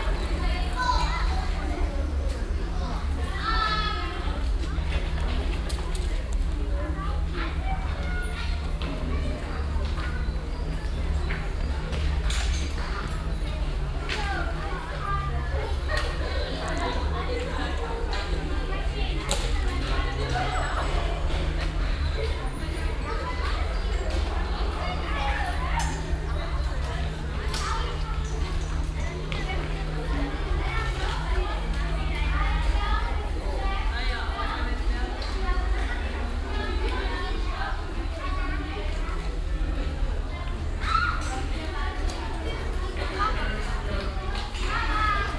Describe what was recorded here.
soundmap: refrath/ nrw, schulhof, morgens, kinder auf fahrrädern, schritte, gespräche, project: social ambiences/ listen to the people - in & outdoor nearfield recordings